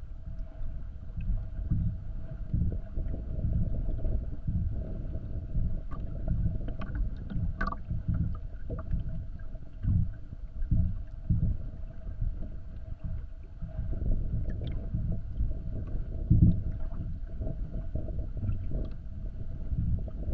Lithuania, Mindunai, wooden footbridge
contact microphones placed between the planks of wooden footbridge
July 2014